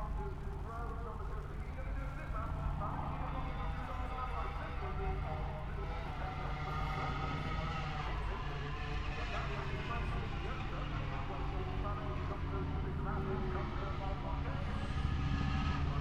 Lillingstone Dayrell with Luffield Abbey, UK - British motorcycle grand prix 2016 ... moto grand prix ...
moto grand prix qualifying two ... Vale ... Silverstone ... open lavaliers clipped to clothes pegs fastened to sandwich box ... umbrella keeping the rain off ... very wet ... associated noise ... rain on umbrella ... helicopters in the air ...
Towcester, UK